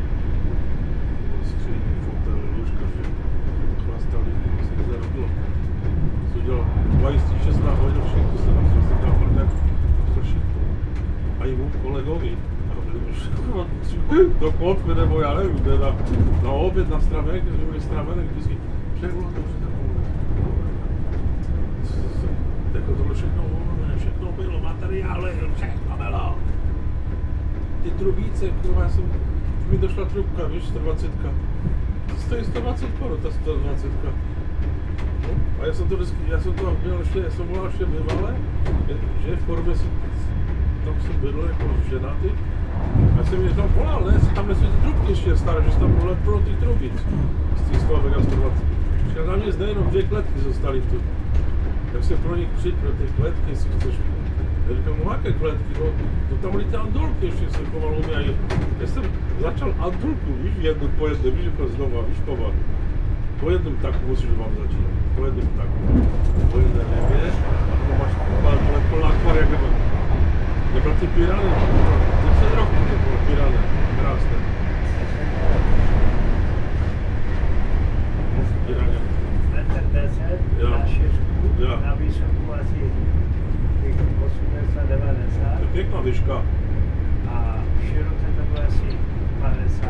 In Přerov two senior pigeon fanciers hopped on my train and started a whimsical chit-chat about their mutual passion in Silesian dialect. What a wonderful intervention into the bland, airplane-like setting of EC 104 'Sobieski', provided by two truly regional characters, breeding genuine ambassadors of a world without borders